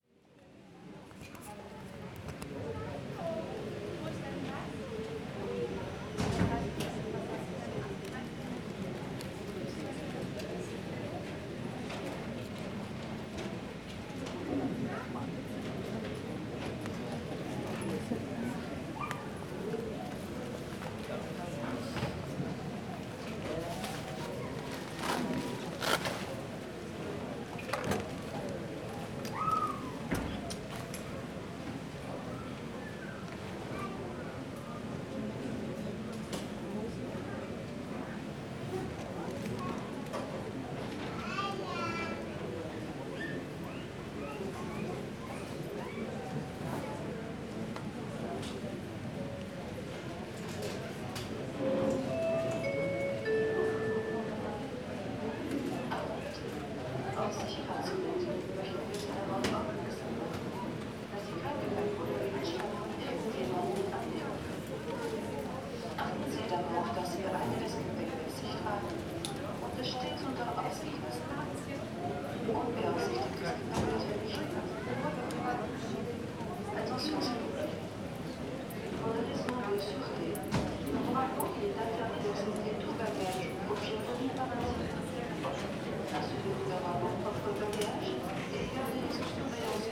EuroAirport Basel-Mulhouse-Freiburg - departure gate
(binarual) waiting in the line to the gate in the busy and crowded departure terminal